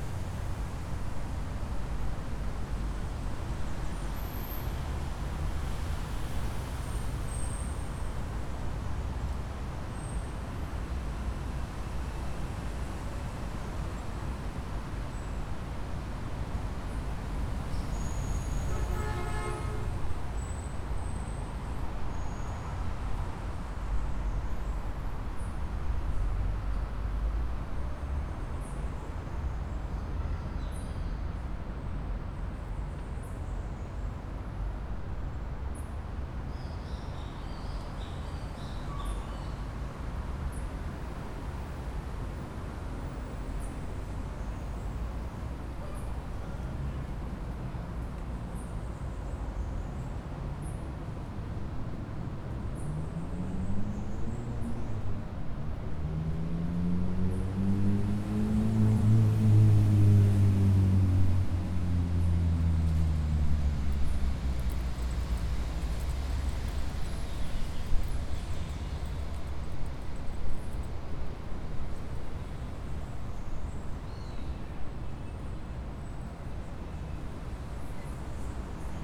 Parque Trianon - Tenente Siqueira Campos - Rua Peixoto Gomide, 949 - Cerqueira César, São Paulo - SP, 01409-001 - Ponte do Parque Trianon
O áudio da paisagem sonora foi gravado na começo da ponte dentro do Parque Trianon, em São Paulo - SP, Brasil, no dia 25 de abril de 2019, às 12:00pm, o clima estava ensolarado e com pouca ventania, nesse horário estava começando o movimento dos transeuntes de São Paulo na hora do almoço. Foi utilizado o gravador Tascam DR-40 para a captação do áudio.
Audio; Paisagem Sonora; Ambientação;Parque Trianon